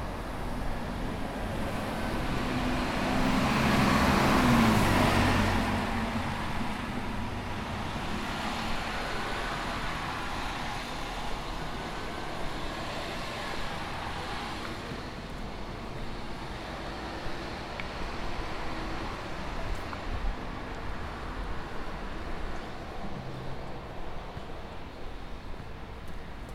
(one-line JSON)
{"title": "Raglan St, Waterloo NSW, Australia - Raglan Walk", "date": "2020-07-10 21:15:00", "description": "The centre of Raglan Street Recorded with a Zoom Mic Pro", "latitude": "-33.90", "longitude": "151.20", "altitude": "34", "timezone": "Australia/Sydney"}